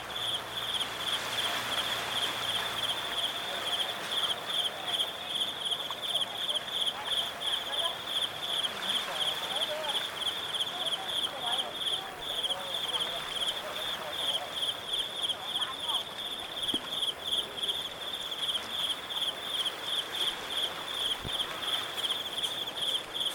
西貢廈門灣 - 灣畔蟲鳴
初秋，晴天。
熱鬧泳灘背後，一片臨海草地傳來蟋蟀鳴響。